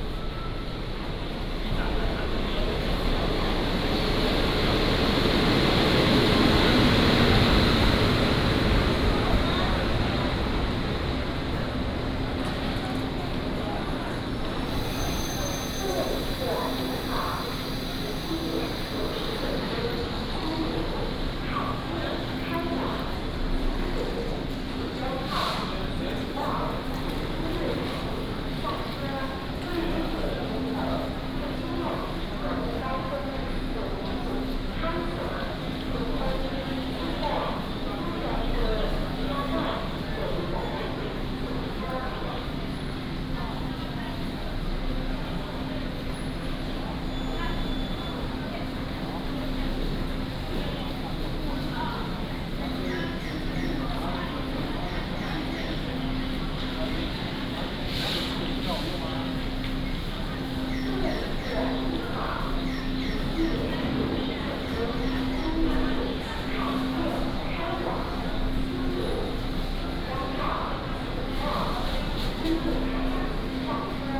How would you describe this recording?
At the station platform, Station information broadcast, Station is very busy time